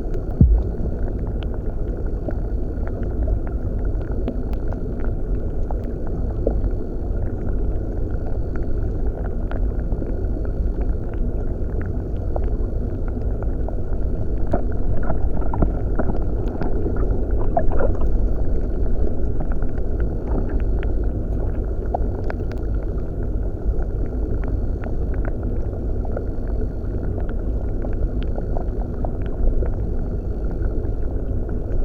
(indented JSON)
{
  "title": "Birštonas, Lithuania, the dam underwater",
  "date": "2022-06-19 19:15:00",
  "description": "Underwater microphone near small dam",
  "latitude": "54.61",
  "longitude": "24.02",
  "altitude": "49",
  "timezone": "Europe/Vilnius"
}